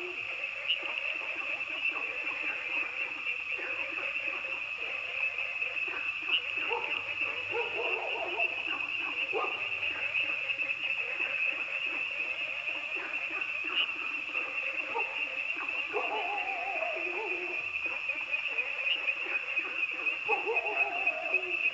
蓮花池藥用植物標本園, 五城村 Yuchih Township - Dogs barking and Frogs chirping
Dogs barking, Frogs chirping
Zoom H2n MS+ XY
Yuchi Township, 華龍巷43號, 3 May 2016